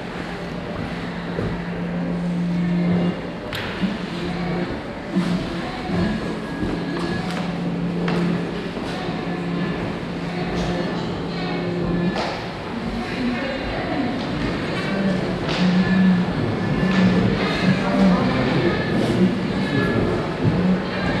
Altstadt, Frankfurt am Main, Deutschland - Frankfurt, Schirn, art hall, video works
Inside the Schirn Kunsthalle during the Yoko Ono exhibition. The sound of two video works that are presented parallel in a small, seperated space of the exhibition. Also to be heard the sound of visitors entering and leaving the space.
soundmap d - topographic field recordings, social ambiences and art places